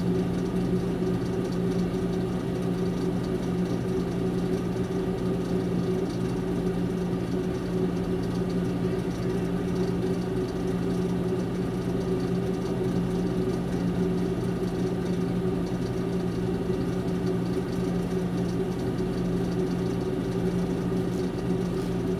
Muhlenberg College, West Chew Street, Allentown, PA, USA - Machine in the Basement of the Baker Center for the Arts
The sound of a machine behind closed doors in the basement of the Muhlenberg College Baker Center for the Arts.
1 December